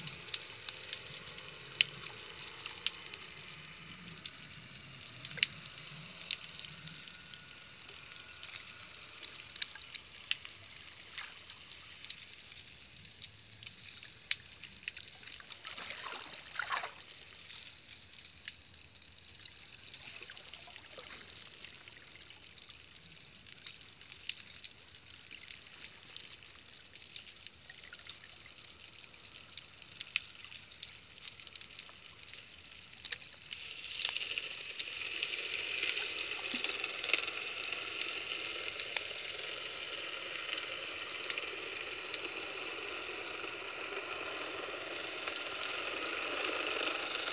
hydrophone recording on Burgaz ada, Istanbul
trying a self made hydrophone on a visit to Burgaz Island
22 February 2010, 14:54